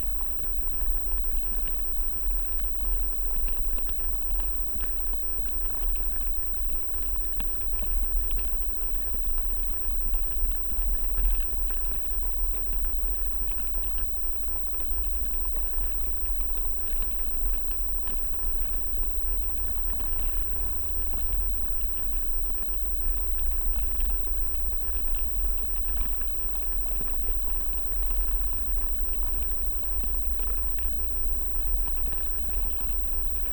Utena, Lithuania, snowflakes on soviet barrack
snowflakes fall on abandoned soviet army building. recorded with two contact mics and electromagnetic antenna priezor
1 December 2018, ~15:00